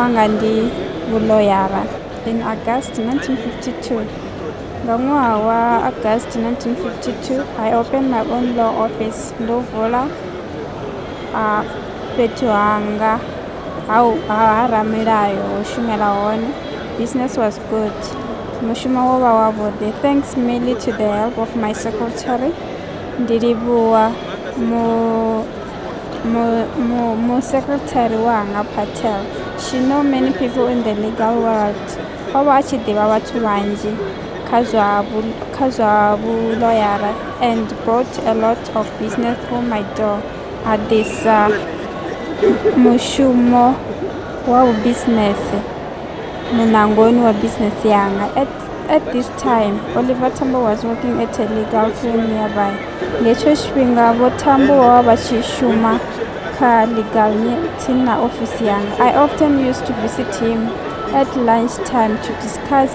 Park Station, Johannesburg, South Africa - The struggle is my life...
For these recordings, I asked people in the inner city of Johannesburg, Park Station and Alexandra Township to read sentences from Nelson Mandela’s biography ‘Long Walk to Freedom’ (the abridged edition!) but translated on the spot into their own mother-tongues...
These are just a few clippings from the original recordings for what became the radio piece LONG WALK abridged.
and these clippings of previously unreleased footage from the original recordings made on a borrowed mini-disc-recorder in Park Station Johannesburg…
LONG WALK abridged first broadcast across the Radia-Network of independent stations in January and February 2007.